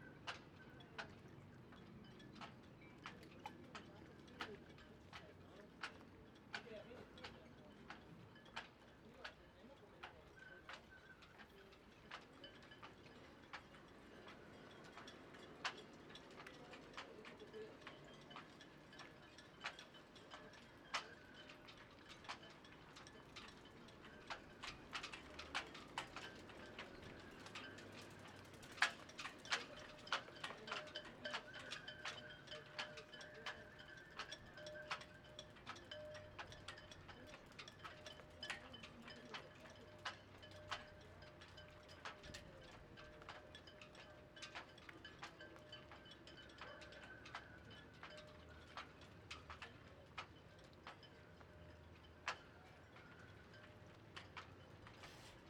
Triest, Italien - Trieste - Sailing port
Loose halyards hitting masts in some pretty strong wind blasts at a sailing port in Trieste, recorded on the steps in the quay wall.
[Sony PCM-D100 with Beyerdynamic MCE 82]